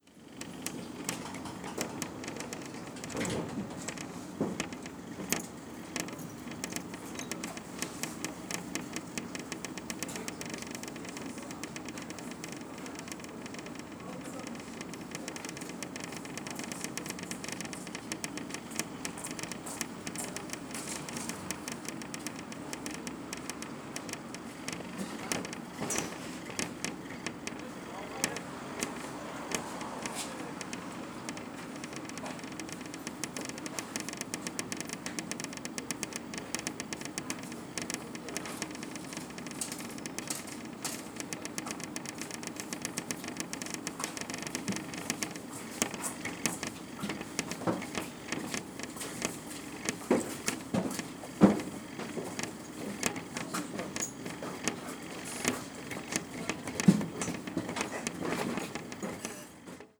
Poznan, Piatkowo, Sobieskiego tram loop - restless window
a rattling window in a tram car